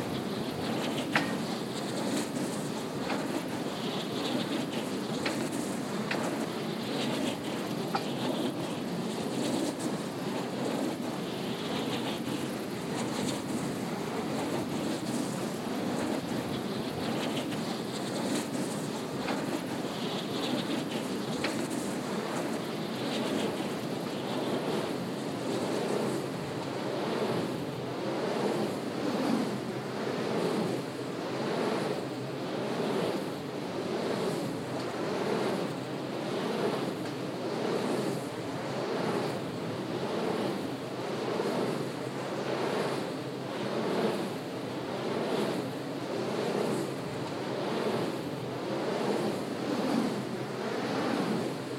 Bouin, France - Wind turbine Back
Prise de son depuis l'arrière de l'éolienne cette fois.
Back of a Spinning wind turbine.
/zoom h4n intern xy mic